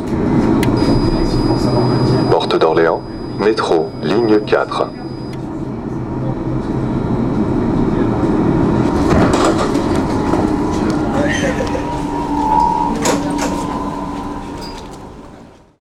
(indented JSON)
{
  "title": "RadioFreeRobots T3 porte d'Orleans",
  "latitude": "48.82",
  "longitude": "2.33",
  "altitude": "74",
  "timezone": "GMT+1"
}